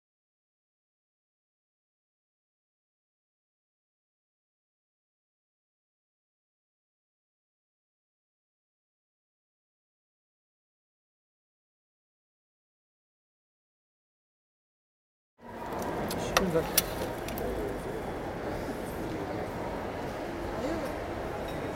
mannheim main station, hall
recorded june 29th, 2008.
part 1 of recording.
project: "hasenbrot - a private sound diary"